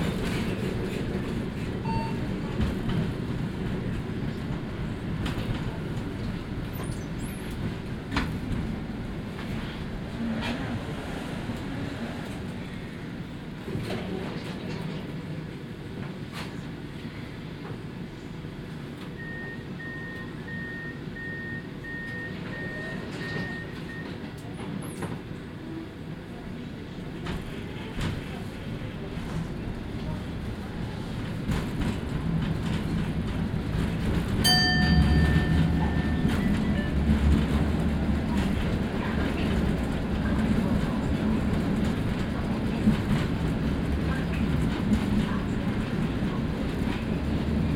Rue Royale, Bruxelles, Belgique - Tram 93 binaural
Old model tram, lot of vibrating sounds.
Tech Note : SP-TFB-2 binaural microphones → Sony PCM-M10, listen with headphones.
Région de Bruxelles-Capitale - Brussels Hoofdstedelijk Gewest, België / Belgique / Belgien